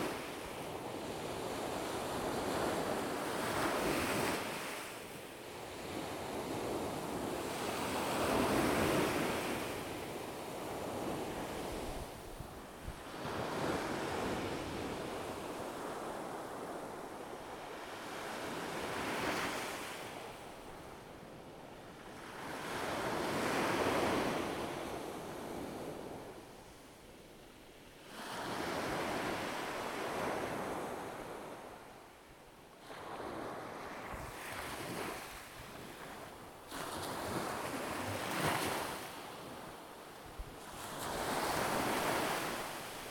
Agiofaraggo Canyon Footpath, Festos, Greece - Waves on pebbles in Agiofarago
The interaction of the water with the pebbles has been captured in this recording.